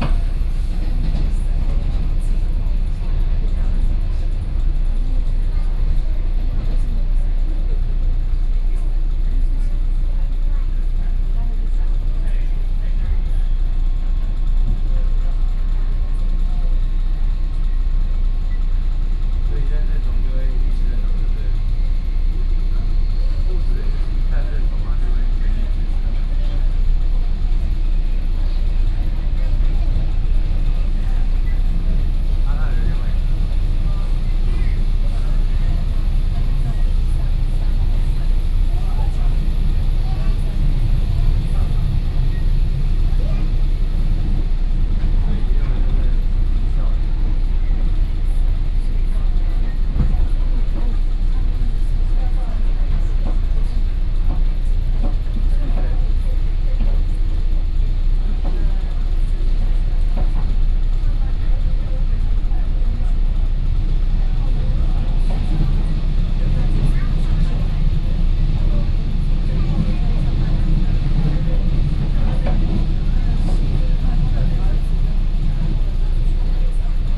Banqiao, Taiwan - inside the Trains
inside the Trains, Sony PCM D50 + Soundman OKM II